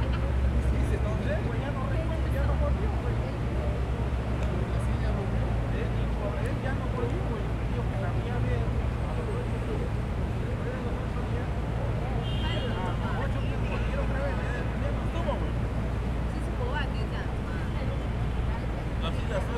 Midtown, New York, NY, USA - In front of the apple store
Saturday afternoon
sitting in front of the Apple store besides the fountain
tourists talking